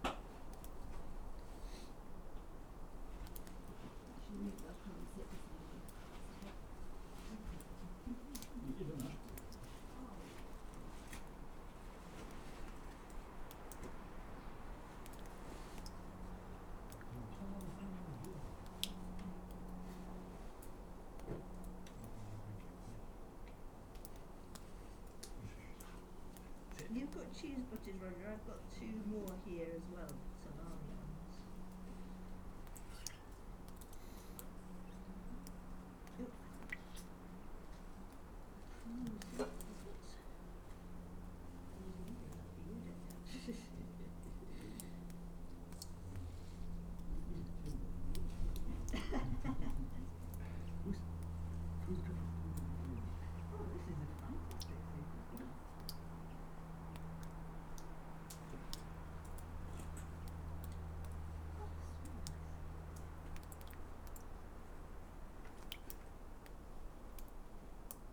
st cuthbert's cave ... an overhanging outcrop of sandstone rock ... supposedly st cuthbert's body was brought here by the monks of Lindisfarne ... set my mics up to record the soundscape and dripping water ... a group of walkers immediately appeared ... they do a good job of describing the cave and its graffiti ... lavalier mics clipped to bag ...